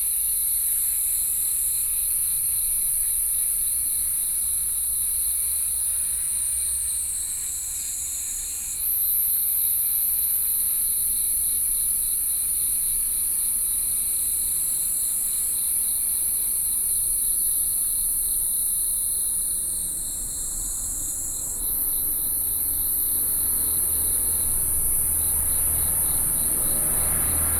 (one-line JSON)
{"title": "Xiaopingding, Tamsui Dist., New Taipei City - Insect sounds", "date": "2012-06-03 07:24:00", "description": "In the morning, Birds singing, Insect sounds, traffic sound, Binaural recordings, Sony PCM D50 + Soundman OKM II", "latitude": "25.16", "longitude": "121.48", "altitude": "251", "timezone": "Asia/Taipei"}